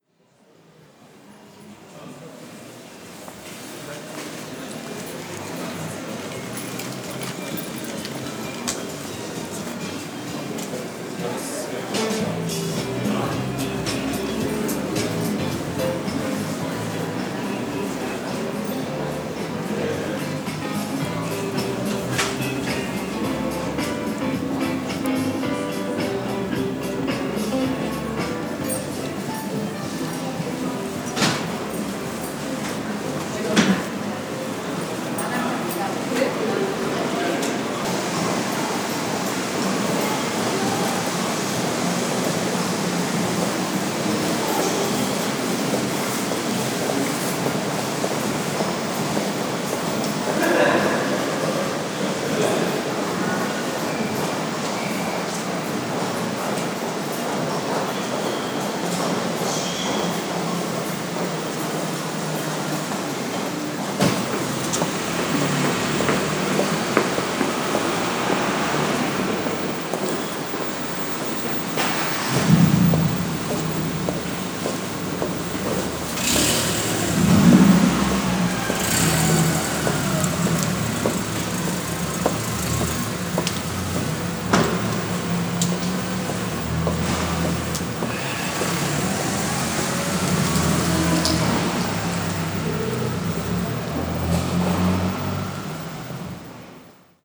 dessau - dessaucenter interior
shoping center, dessau, einkaufcenter, dessaucenter, innen, parkhaus, parking
29 October 2010, ~18:00